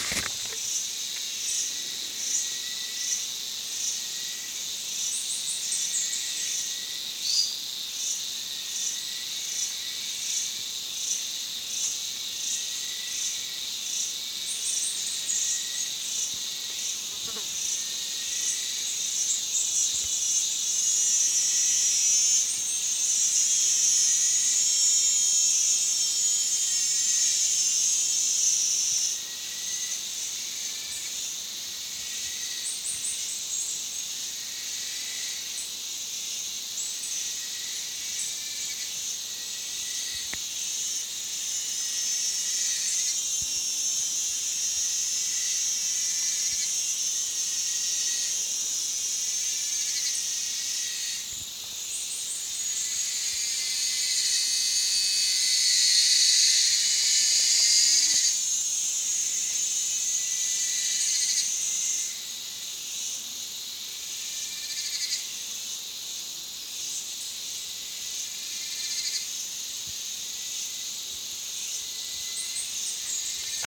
forest border sound in last days of summer season São Sebastião da Grama - SP, Brasil - forest border sound in last days of summer season
You listened insects doing noisy in a sunny day in the final of summer season near a high altitude forest in southeast of Brazil.
Região Sudeste, Brasil, 12 March, 11:54